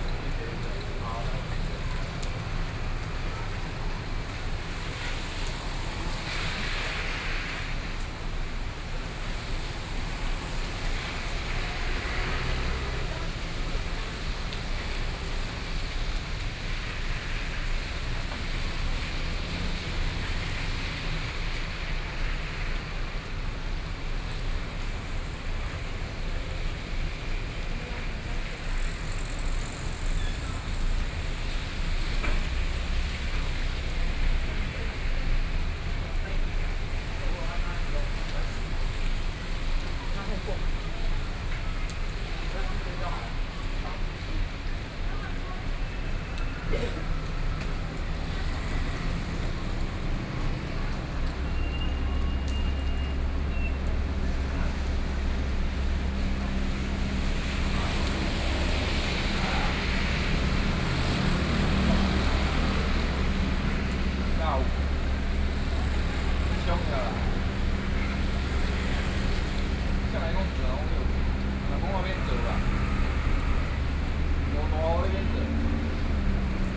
Rainy Day, Traffic noise and the crowd, Sony PCM D50 + Soundman OKM II